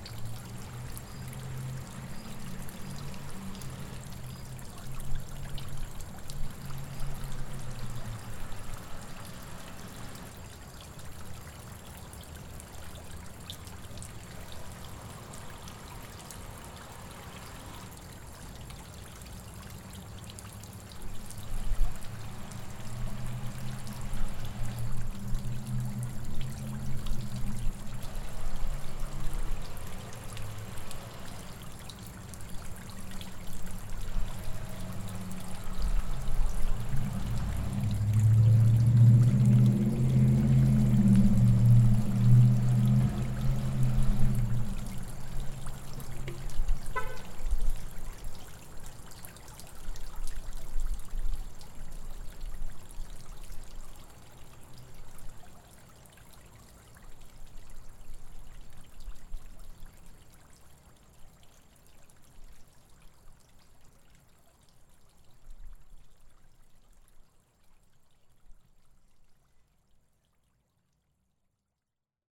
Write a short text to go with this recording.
A recording made of a fountain trickling water. Recorded with a Zoom H4n.